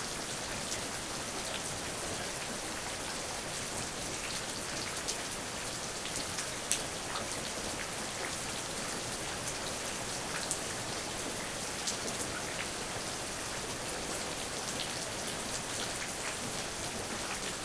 Lithuania, Kulionys, rain outside
just raining outside villas
18 September 2010